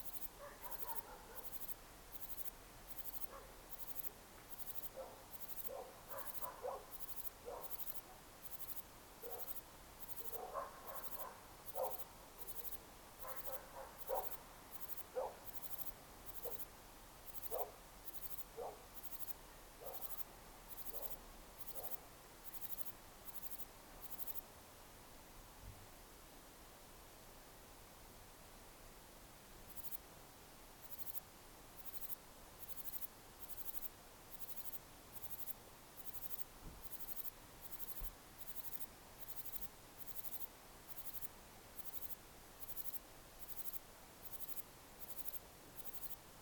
Sitting on the back fence, Lobster Farmhouse, Portland, Dorset, UK - Listening to the crickets
Returning to my B&B after dark, I noticed many crickets in the hedges around. It sounded amazing, but all of a sudden people were driving on the driveway where I heard the crickets, and I was self conscious about trying to record them. I did not want to draw attention to myself, but as I rounded the corner of where I was staying, I realised that a single cricket was making its wondrous music behind the hedge. I positioned the recorder close to its place and sat back a little distance away to listen acoustically to the sound and to the distant surf of the sea. To dogs barking, someone squeaking home on their bicycle. The white noise of traffic on the road. The evening stillness. Then happiest of happy times, a small and industrious hedgehog came bowling down the path, all business and bustle. I really do love a hedgehog.